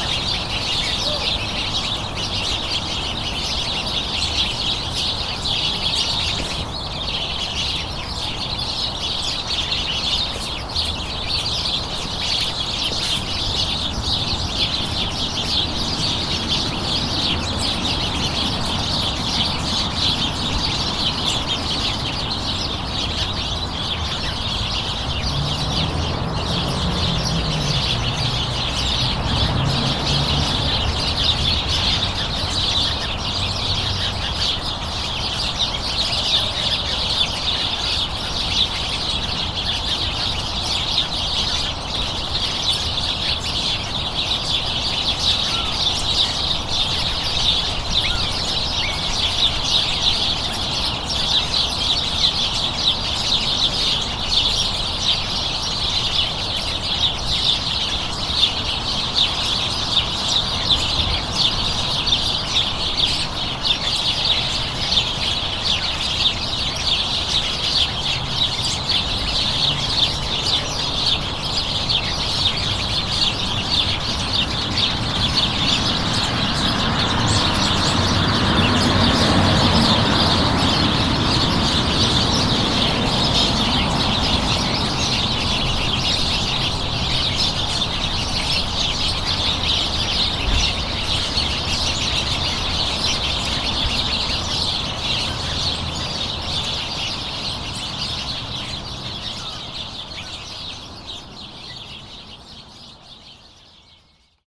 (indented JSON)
{
  "title": "Bolton Hill, Baltimore, MD, USA - Birds",
  "date": "2016-11-06 16:30:00",
  "description": "Birds and local traffic recorded with onboard Zoom H4n microphones",
  "latitude": "39.31",
  "longitude": "-76.63",
  "altitude": "48",
  "timezone": "America/New_York"
}